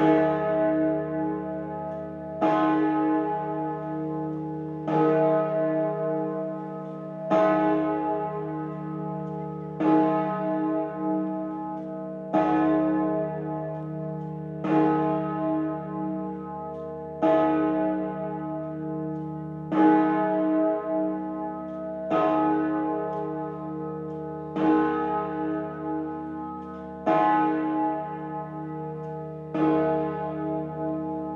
the siege bell war memorial, valetta, malta.
Valletta, Malta, October 2009